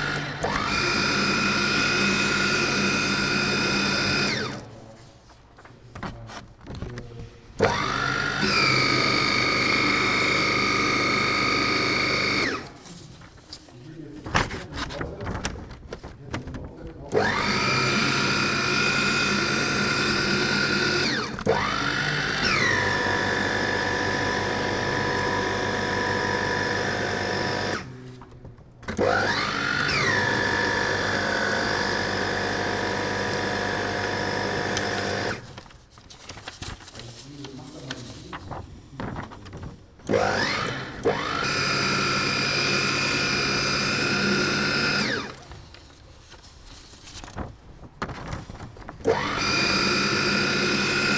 Köln - office, shredder
shreddering of accidentally printed computer security related paper copies.